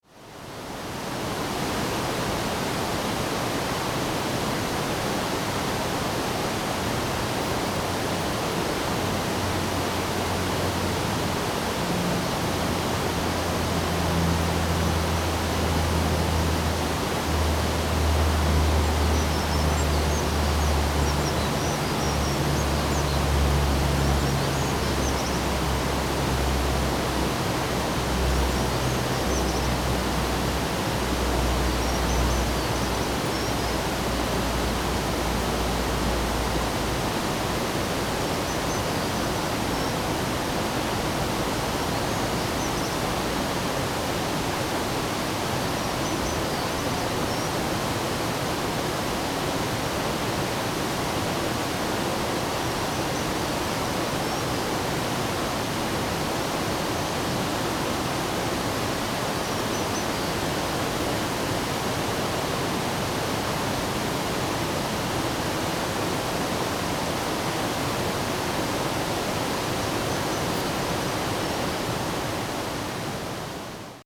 瀑布路, 烏來里, Wulai Dist., New Taipei City - the waterfall
Facing the waterfall, Traffic sound, Birds call, The plane flew through
Zoom H2n MS+ XY
New Taipei City, Taiwan, 5 December 2016, ~10:00